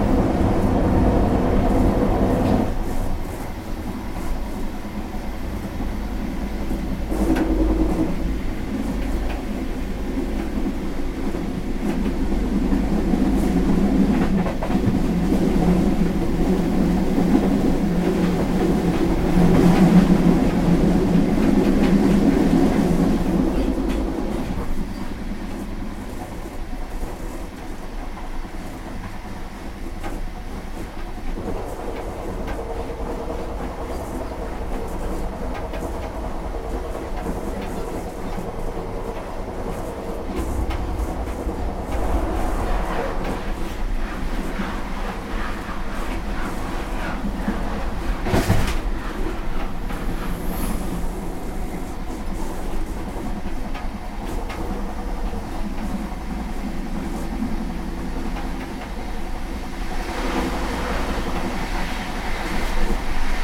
grafing station, inside arriving train
recorded june 6, 2008. - project: "hasenbrot - a private sound diary"
Grafing, Germany